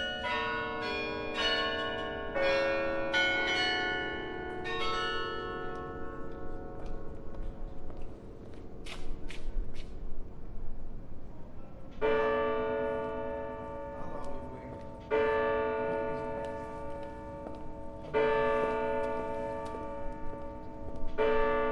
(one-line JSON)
{"title": "Haarlem, 2009, Bells of Sint-Bavokerk, invisisci", "latitude": "52.38", "longitude": "4.64", "altitude": "8", "timezone": "GMT+1"}